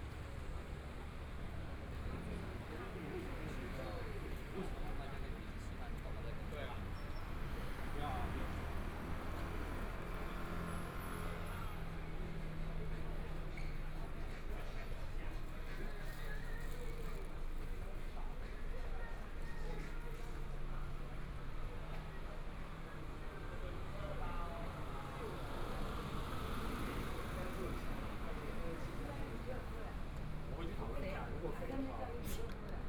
Shuangcheng St., Taipei City - Walking across the different streets
Walking across the different streets, Traffic Sound, Market, Binaural recordings, ( Proposal to turn up the volume ) ( Keep the volume slightly larger opening )Zoom H4n+ Soundman OKM II
Zhongshan District, Taipei City, Taiwan, February 2014